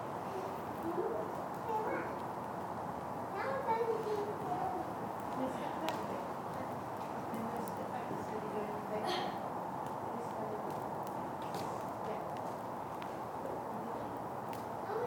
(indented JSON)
{
  "title": "Inside the Octagon, Glen of the Downs, Co. Wicklow, Ireland - Chamber Orchestra",
  "date": "2017-07-29 11:37:00",
  "description": "This recording was made inside the Octagon: an old, Octagonal structure built by the Freemasons. Kids play, wind blows, fire burns, traffic passes way below at the bottom of the valley along the N11. The recorder is a lovely old wooden one belonging to Jeff. Lower notes are harder to get, and the wind kept blowing into the microphones, so the recording's not pristine. You can hear the strange acoustic of the Octagon. Recorded with the EDIROL R09.",
  "latitude": "53.14",
  "longitude": "-6.12",
  "altitude": "205",
  "timezone": "Europe/Dublin"
}